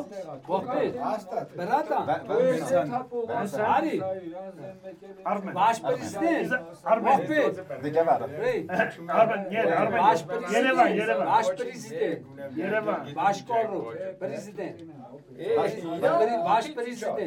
After a terrible storm, some farmers took a car and went here, at the monastery. The old church is on the top of a volcano. They prayed during 10 minutes. Some other people are here. Nobody knows nobody, but everybody discuss. They opened a vodka bottle and give food each others.